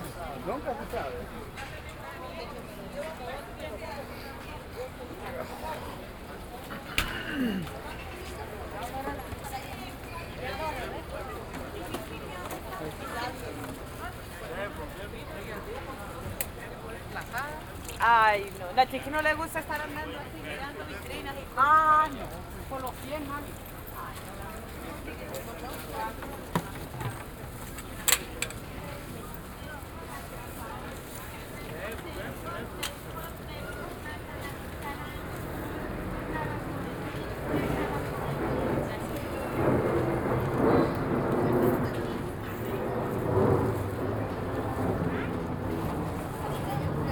Jackson Heights, Queens, NY, USA - Roosevelt Jackson Heights Station
Roosevelt Jackson Heights Station: exiting the 7 train then walking down Roosevelt Ave. Binaural recording using H4n and soundman binaural microphone.